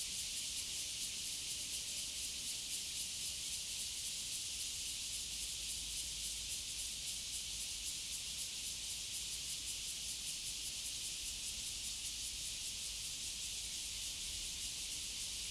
In the cemetery, Cicadas sound, Traffic Sound, Very hot weather
Zoom H2n MS+ XY
7 September, ~12:00